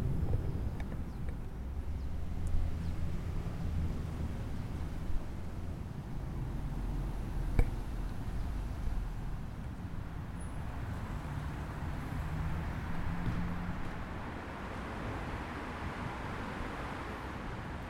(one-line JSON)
{"title": "Noe Valley, San Francisco, CA, USA - Elevation Sound Walk", "date": "2013-09-19 10:00:00", "description": "Recordings starting at the top of Billy Goat Hill Park and ending in Mission. Recordings took place every 5 minutes for 1 minute and was then added together in post-production. The path was decided by elevation, starting from high to low.", "latitude": "37.74", "longitude": "-122.43", "altitude": "99", "timezone": "America/Los_Angeles"}